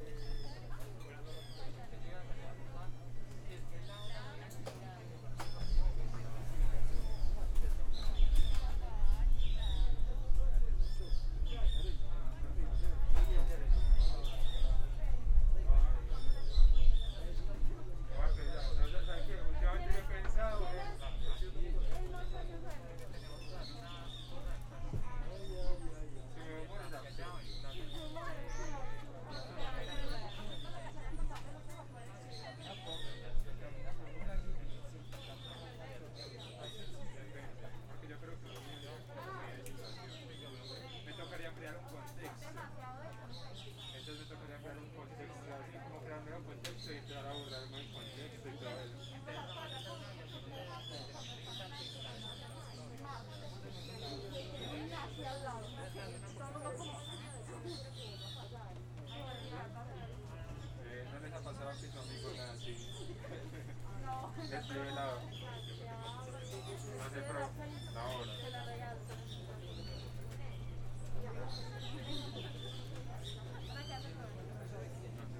Cra., Medellín, Antioquia, Colombia - Kiosco Comunicación Universidad de Medellín
Conversaciones en el kiosco de comunicación en un día soleado.
Sonido tónico: Personas conversando y pájaros cantando.
Señal sonora: Botella de vidrio, puerta de microondas.
Se grabó con una zoom H6, con micrófono XY.
Tatiana Flórez Ríos - Tatiana Martínez Ospino - Vanessa Zapata Zapata